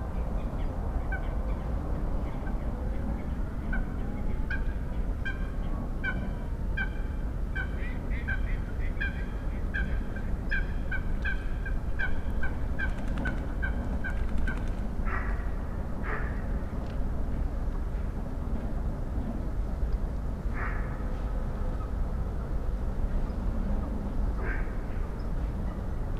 Tineretului Park, București, Romania - Winter Early Evening Ambience in Tineretului Park
A stroll through Tineretului Park in the early evening of January 21st, 2019: nature sounds combined with traffic hum in the background, police & ambulance sirens, close footsteps and voices of passerby. Using a SuperLux S502 ORTF Stereo Mic plugged into Zoom F8.
21 January 2019, 18:30